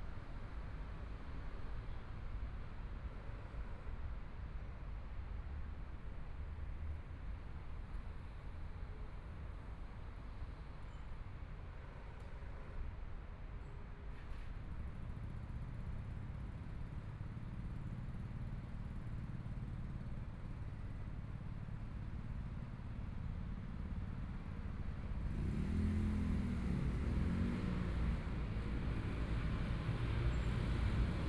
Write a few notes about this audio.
Environmental sounds, The house has been demolished, Now become a temporary park, The future will be built into the building, Motorcycle sound, Traffic Sound, Binaural recordings, Zoom H4n+ Soundman OKM II